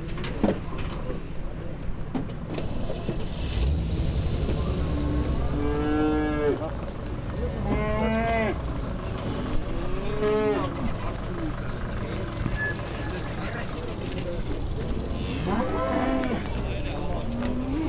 Artashat, Armenia - Cattle Market near Artashat, Armenia
Leaving Artashat, the regional administrative capital, behind, we come to an improvised cattle market alongside the road. Sheep, cows, dogs and men standing in the grass and the mud, making deals. We strike up a conversation with one of the men and, as always happens in the Caucasus, he invites us to visit him if we are ever in his town.
Արարատի մարզ, Armenia, 14 February 2016, ~09:00